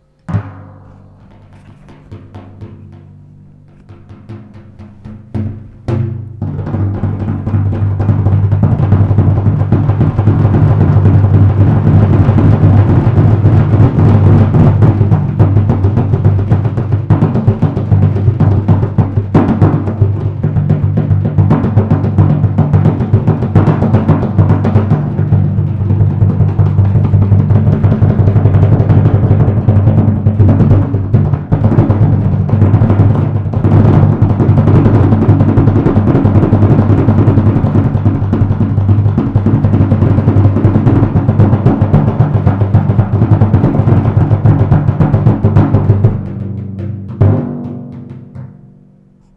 Poznan, Grand Theater - kettledrums in the attic
rumble of a few kettledrums that are standing in the ballet practice room in the Grand Theater. (sony d50)
Poznań, Poland, 25 December 2015